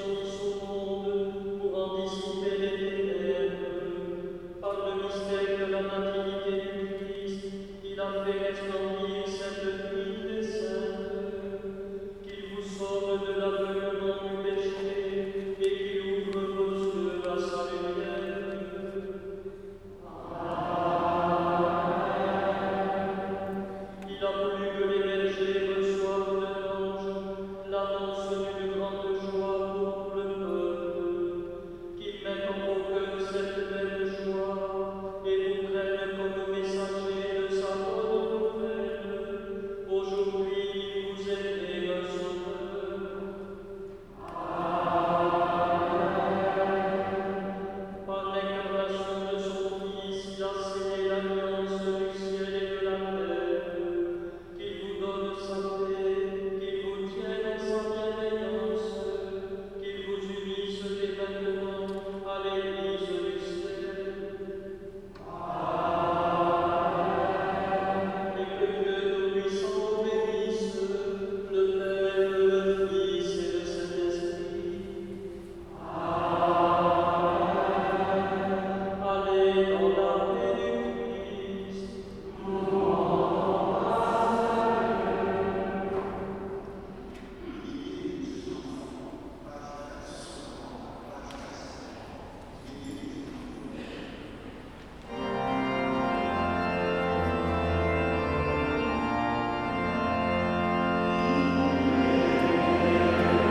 Sainte-Geneviève Church, Bordeaux, France - Catholic Christmas mass
Very end of a catholic mass for Christmas.
[Tech.info]
Recorder : Tascam DR 40
Microphone : internal (stereo)
Edited on : REAPER 4.54